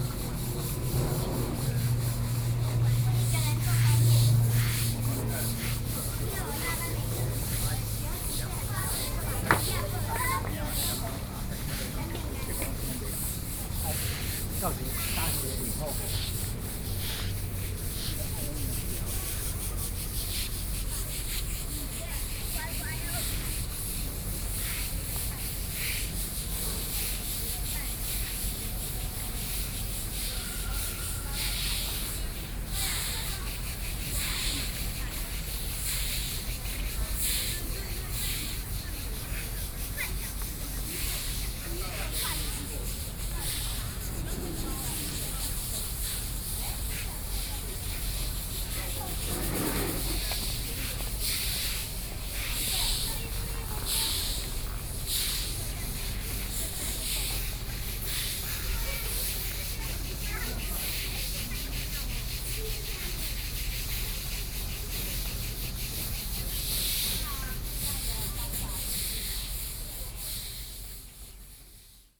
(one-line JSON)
{"title": "BiHu Park, Taipei City - Brush", "date": "2014-05-04 11:45:00", "description": "Brush, Clean the floor, Aircraft flying through", "latitude": "25.08", "longitude": "121.58", "altitude": "13", "timezone": "Asia/Taipei"}